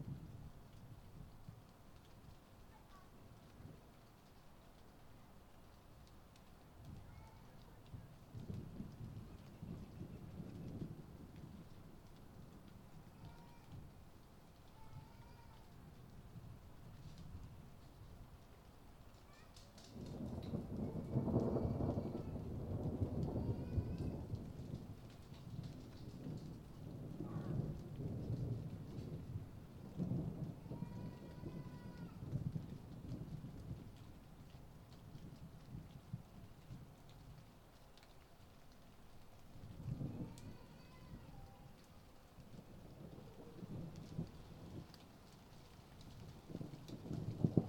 {
  "title": "Connolly St, Midleton, Co. Cork, Ireland - Incoming Rain",
  "date": "2022-08-14 19:26:00",
  "description": "Sounds of thunder and rain, interspersed with family life.",
  "latitude": "51.91",
  "longitude": "-8.17",
  "altitude": "7",
  "timezone": "Europe/Dublin"
}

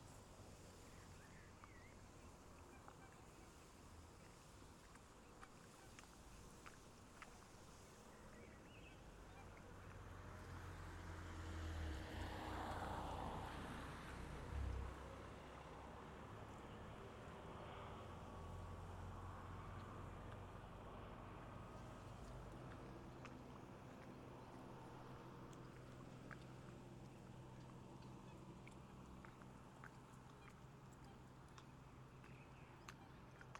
{
  "title": "Poelestein, Abcoude, Netherlands - Lakeside Abcoude",
  "date": "2018-07-12 10:30:00",
  "description": "Originally recorded with SPS200 A-Format microphone. Afterwards decoded to binaural format for listening purposes. Soft lapping of little waves against the shore. Distant highway.",
  "latitude": "52.28",
  "longitude": "4.97",
  "altitude": "1",
  "timezone": "Europe/Amsterdam"
}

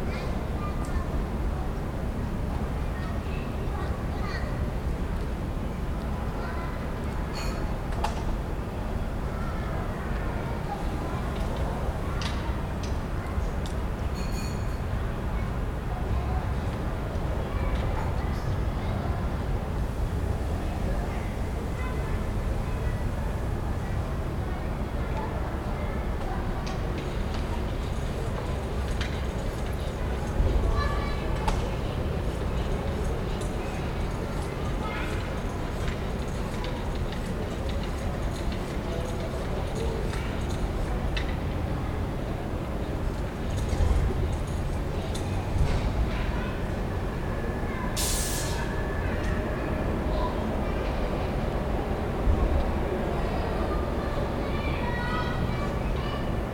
{
  "title": "Mitte, rooftop ambience",
  "date": "2010-09-22 14:30:00",
  "description": "ambient sounds in Berlin Mitte",
  "latitude": "52.52",
  "longitude": "13.41",
  "altitude": "44",
  "timezone": "Europe/Berlin"
}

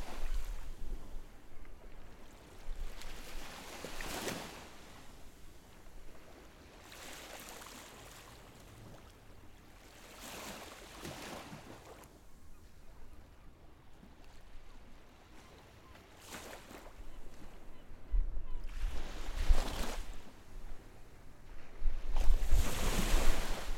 {
  "title": "bul. \"Primorski\", Primorski, Varna, Bulgaria - Central Beach Varna",
  "date": "2021-12-20 09:25:00",
  "description": "Waves, seagulls and some wind on a sunny winter morning at the central beach of Varna. Recorded with a Zoom H6 using the X/Y microphone.",
  "latitude": "43.20",
  "longitude": "27.92",
  "timezone": "Europe/Sofia"
}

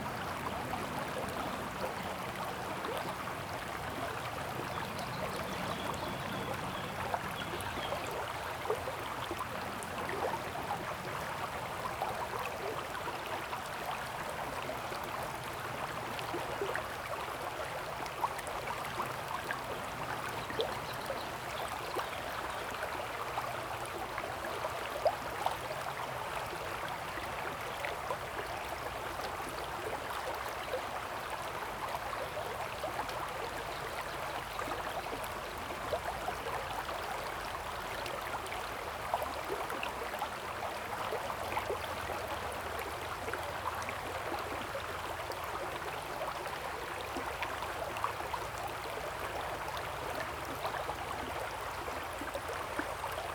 {
  "title": "中路坑溪, 埔里鎮桃米里 - streams",
  "date": "2016-05-05 09:05:00",
  "description": "The sound of water streams, birds\nZoom H2n MS+XY",
  "latitude": "23.94",
  "longitude": "120.92",
  "altitude": "490",
  "timezone": "Asia/Taipei"
}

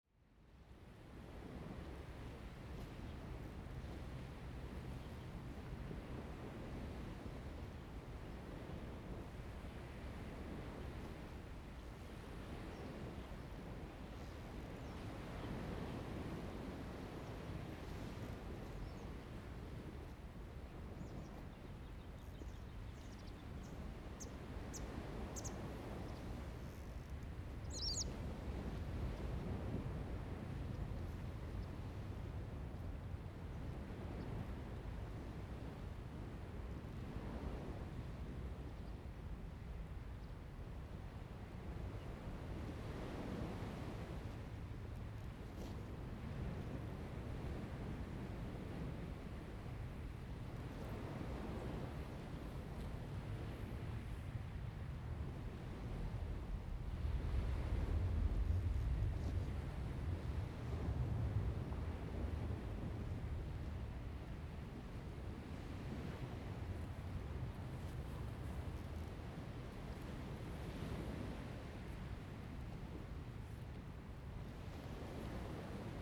{
  "title": "湖井頭, Lieyu Township - At the beach",
  "date": "2014-11-04 10:11:00",
  "description": "At the beach, Sound of the waves, Birds singing\nZoom H2n MS +XY",
  "latitude": "24.44",
  "longitude": "118.23",
  "altitude": "4",
  "timezone": "Asia/Shanghai"
}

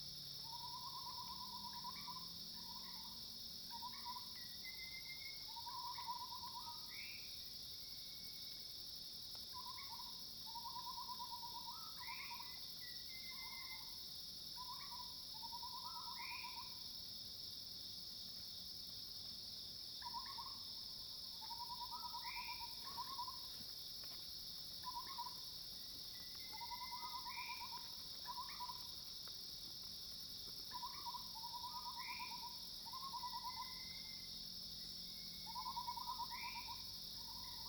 {
  "title": "華龍巷, 南投縣魚池鄉, Taiwan - Insects sounds",
  "date": "2016-09-19 06:32:00",
  "description": "Insects called, Birds call, Cicadas cries, Facing the woods\nZoom H2n MS+XY",
  "latitude": "23.93",
  "longitude": "120.89",
  "altitude": "755",
  "timezone": "Asia/Taipei"
}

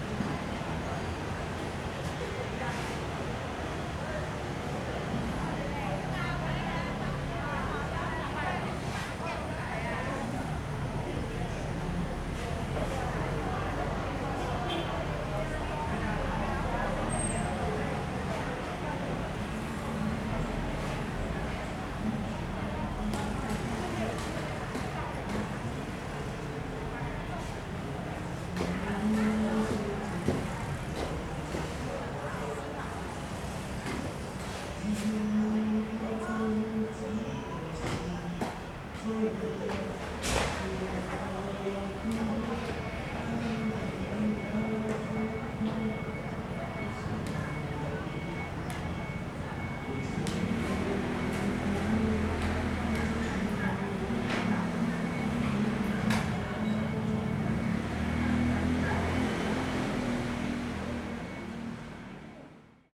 {
  "title": "仁福公園, Sanchong Dist., New Taipei City - in the Park",
  "date": "2012-02-10 12:48:00",
  "description": "in the Park, Aircraft flying through, Near Market, Someone singing, Traffic Sound\nSony Hi-MD MZ-RH1 +Sony ECM-MS907",
  "latitude": "25.07",
  "longitude": "121.50",
  "altitude": "12",
  "timezone": "Asia/Taipei"
}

